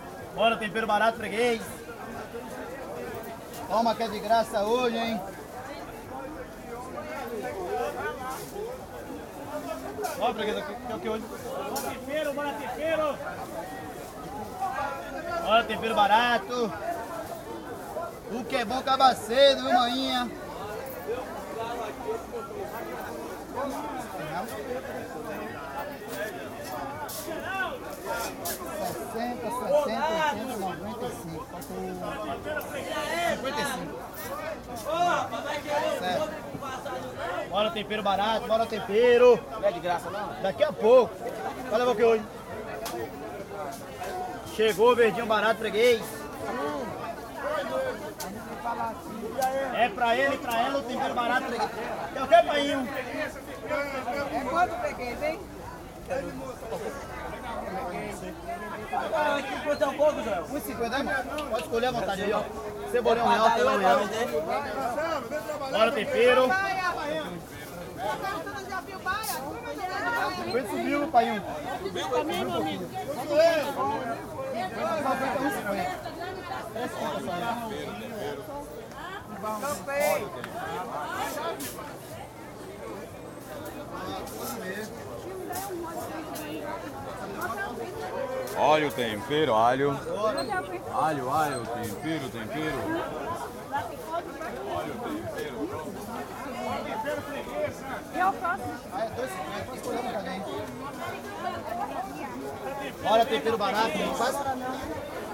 R. Act, Cachoeira - BA, Brasil - Feira, Vendedor de tempero - Market Place, green seasoning salesman
Feira, sábado de manhã, um feirante vende tempero verde.
Market Place, Saturay morning, a salesman sells green seasoning.